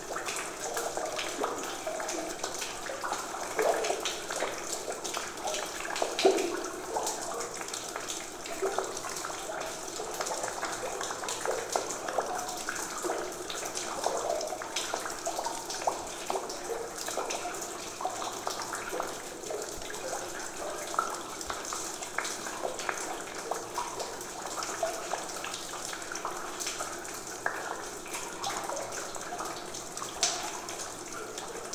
I have found some hole in watertower to put my small mics in...
Utenos apskritis, Lietuva